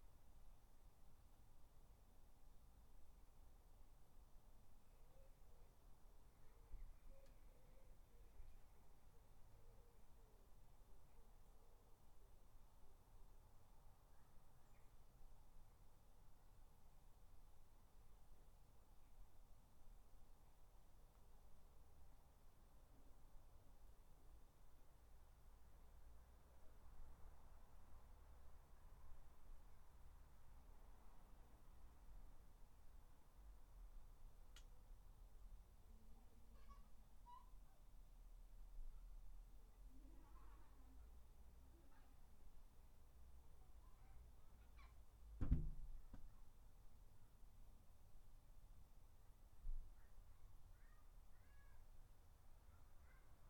Dorridge, West Midlands, UK - Garden 15
3 minute recording of my back garden recorded on a Yamaha Pocketrak